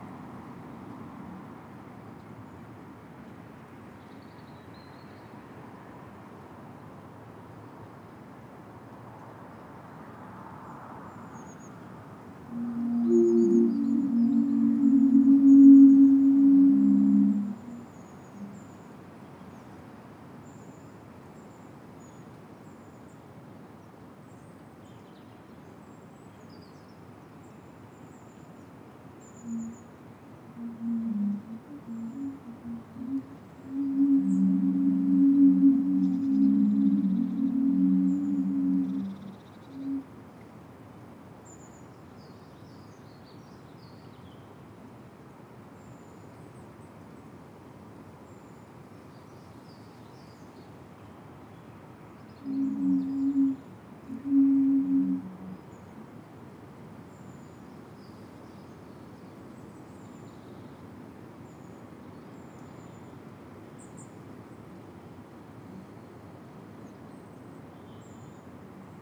Spark Bridge - Bamboo Wind Flutes
Five 10' high home-made bamboo wind flutes standing vertically in a circle of about 2m diameter. Four thick and one thinner bamboo flute. The wind was rising during the afternoon, a precursor to storm Ciara. The higher pitched notes come from the thinner bamboo. If you would like to commission a set of these wind flutes, then please get in touch.
(SDMixpre10 + 2 spaced DPA4060)